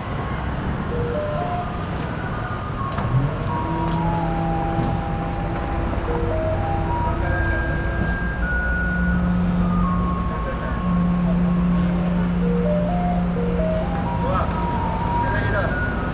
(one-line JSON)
{"title": "Yanchengpu, Kaohsiung - Garbage truck arrived", "date": "2012-04-05 17:09:00", "description": "Garbage truck arrival broadcast music, Sony PCM D50", "latitude": "22.63", "longitude": "120.28", "altitude": "16", "timezone": "Asia/Taipei"}